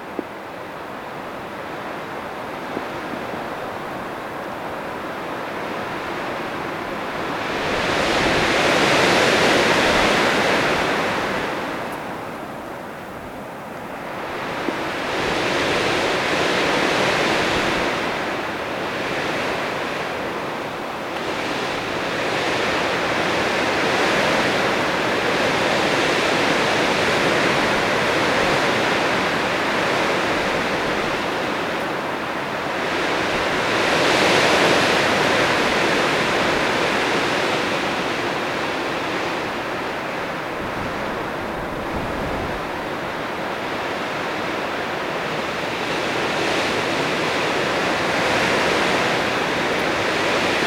Montdardier, France - Wind in a fir
Wind is playing with the only fir of this small mountain. This makes powerful squall.